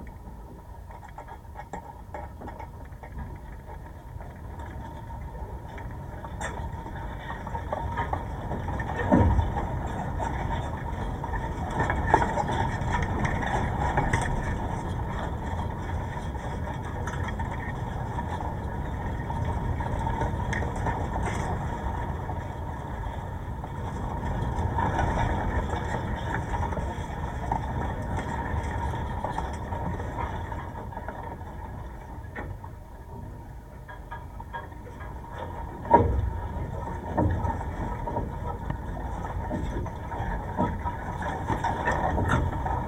Fence, Yeatman, Missouri, USA - Chain Link Fence
Contact mic attached to a chain link fence on concrete platform from abandoned gravel dredging operation overlooking Meramec River. Wind is blowing through the fence and branches of trees and other plants growing through the fence.
Missouri, United States of America, 31 October